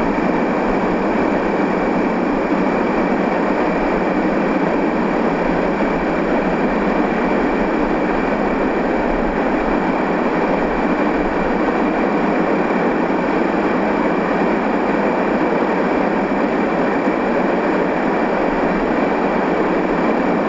Nelson Dewey State Park - Evening chirps and trains
recorded at cart-in campsite D on my Olympus LS-10S
Cassville, WI, USA, 29 September, 19:29